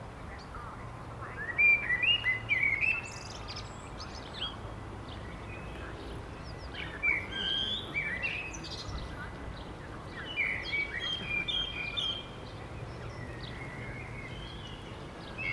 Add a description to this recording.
A binaural recording. Headphones recommended for best listening experience. At a Klinikum where a blackbird was taped in its full acoustic element. Recording technology: Soundman OKM, Zoom F4.